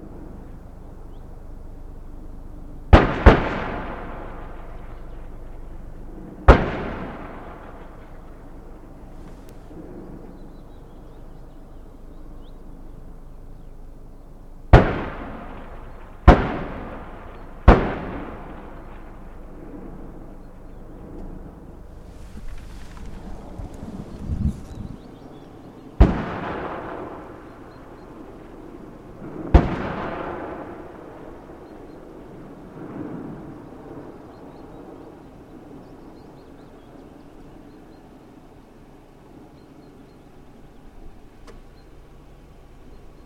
Otterburn Artillery Range - mortar fire at Ridleeshope
Mortar fire, recorded on the ridge above Ridleeshope range, along the Cottonshope road, between the former roman camps on Thirl Moor